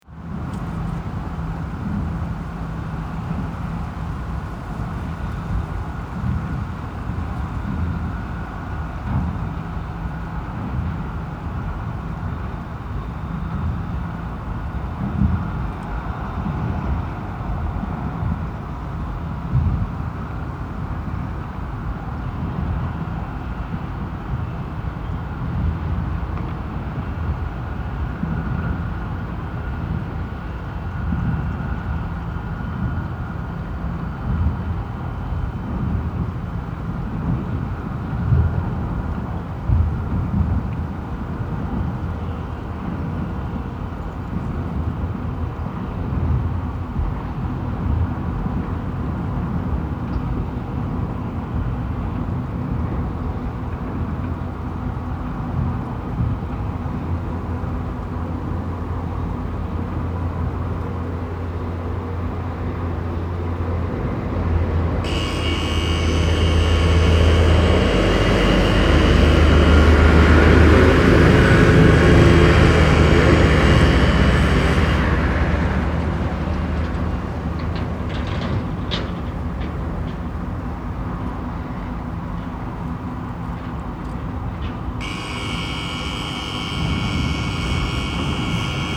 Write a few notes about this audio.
The machine that builds the massive coal mountains for storage before it is loaded on to trains. It produces some powerful low frequencies. Half way through the recording alarms sound for the start of the nearby conveyer belts.